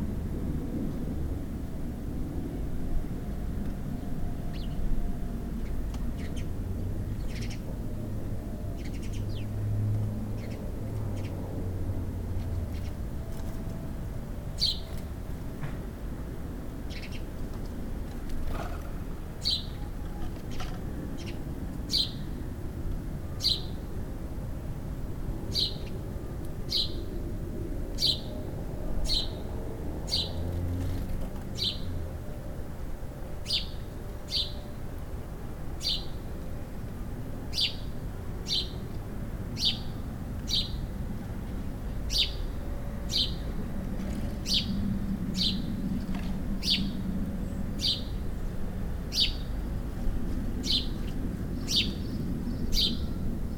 In the branches of a distorted hazel tree, Reading, UK - A sparrow singing in the dead distorted hazel tree
This is the sound of a sparrow singing high up in the branches of what is now a dead tree. Sparrows are nesting in the roof of the house; they fly about the in a little squadron, belting out their rather tuneless peeps. Decided to go up a ladder and strap my recorder to a branch near to where they like to perch, in order to record their song more closely and hopefully hear them in a little more detail. Recorded with EDIROL R-09 cable-tied up in the tree.
England, United Kingdom, European Union, 12 July 2013, 11:00